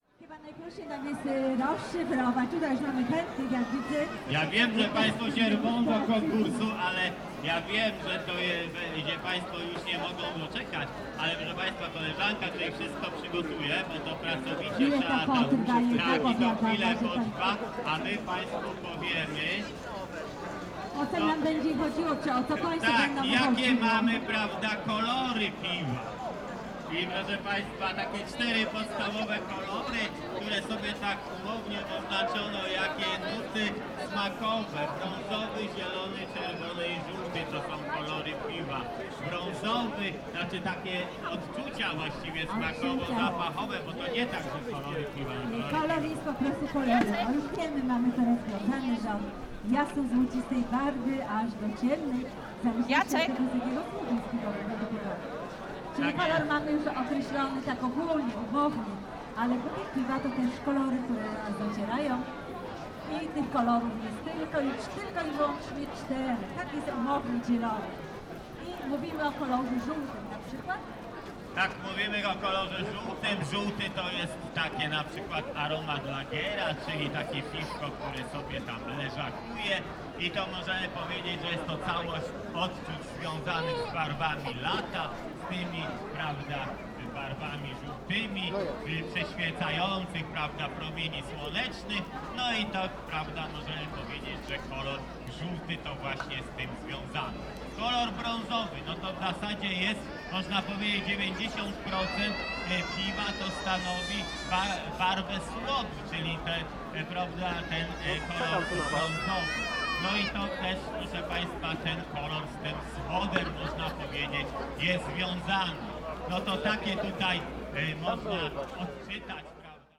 Szreniawa, National Museum of Farming - beer festival
two presenters on stage explain the different colors beer can have and what it means for the flavor and type. plenty of people occupying the lawn, enjoying warm spring sunday and their beer they got at the stalls around the lawn.